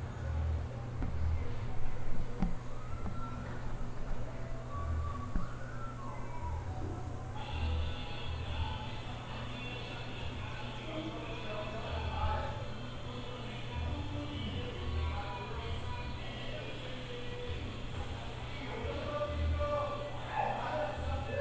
Captação no Hospício. Residência Universitária - Hospício. Residência Universitária
Captação feita com base da disciplina de Som da Docente Marina Mapurunga, professora da Universidade Federal do Recôncavo da Bahia, Campus Centro de Artes Humanidades e Letras. Curso Cinema & Audiovisual. CAPTAÇÃO FOI FEITA COM UM PCM DR 50. RESIDÊNCIA UNIVERSITÁRIA HOSPÍCIO EM CRUZ DAS ALMAS-BAHIA.
Bahia, Brazil